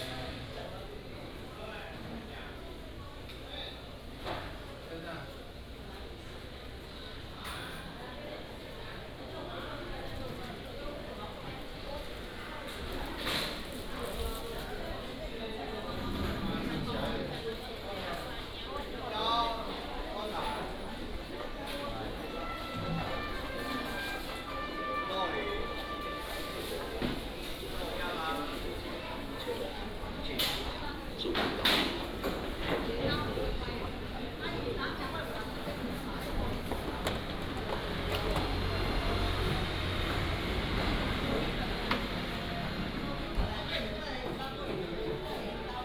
Walking in the traditional market, Is preparing for rest